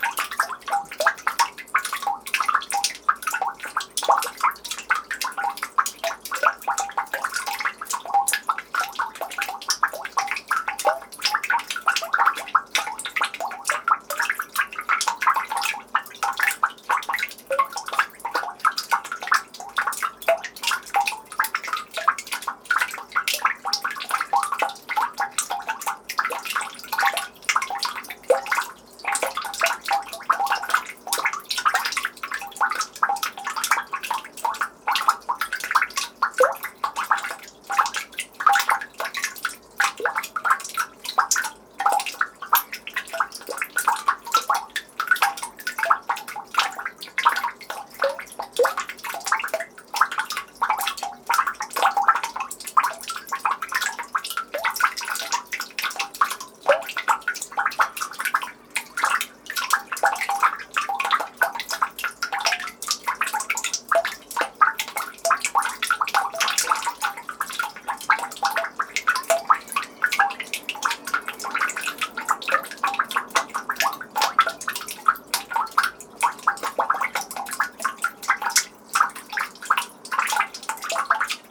Harbour, Turku, Finlande - Rhythmic drops of melting snow in the street trough a manhole (Turku, Finland)
Some snow is melting in the street close to the port of Turku, drops of water are falling inside the manhole. Very close recording with the mic as close as possible.
Recorded with an ORTF setup Schoeps CCM4 x 2 on a Cinela Suspension
Recorder MixPre6 by Sound Devices
Recorded on 7th of April 2019 in Turku, Finland.
During a residency at Titanik Gallery.
GPS: 60.435320,22.237472